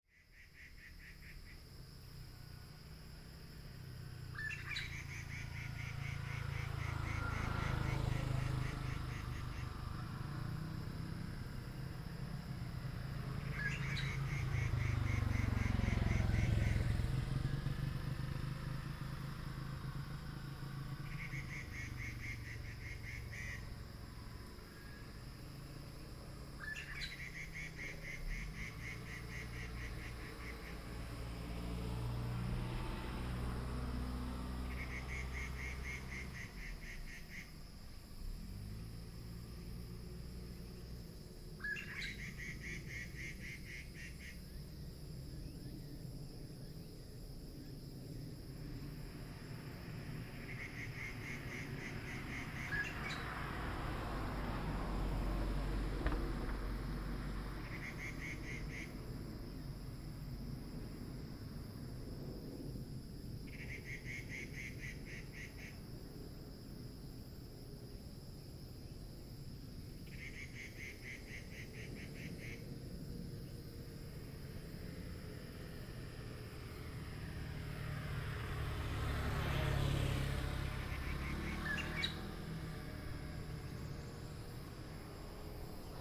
Shanhu Rd., Baoshan Township - sound of birds
sound of birds, traffic sound, sound of the plane, Binaural recordings, Sony PCM D100+ Soundman OKM II